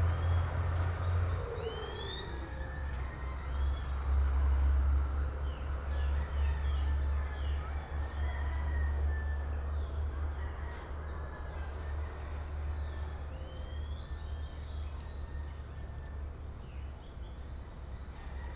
Villavicencio, Meta, Colombia - Amanecer llanero
Singing birds all over the place very early in the morning.
For a better audio resolution and other audios around this region take a look in here:
José Manuel Páez M.